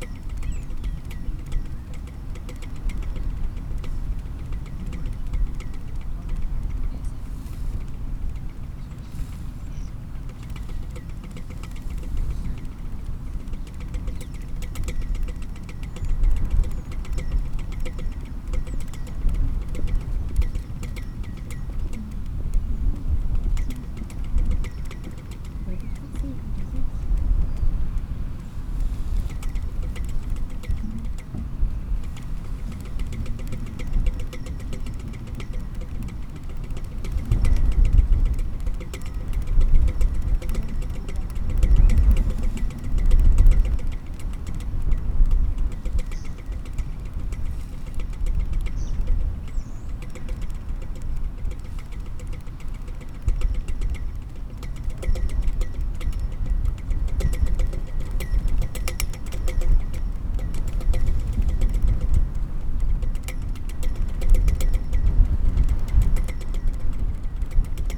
St Bartholowmews Church, Newbiggin-by-the-Sea, UK - Pinging flagpole ...
Pinging flagpole ... St Bartholowmews church yard ... Newbiggin ... open lavaliers clipped to sandwich box ... background noise from blustery wind ... voices ...
September 2017